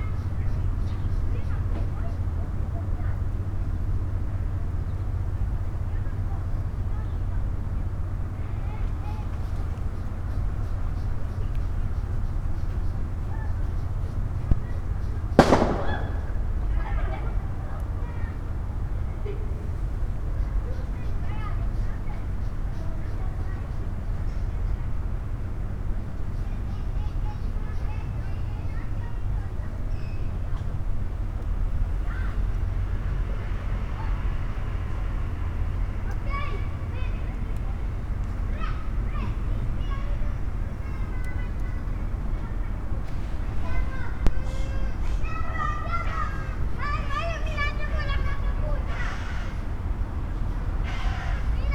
Via Pasquale Besenghi, Trieste - remoteness, yard, kids
Trieste, Italy, 7 September 2013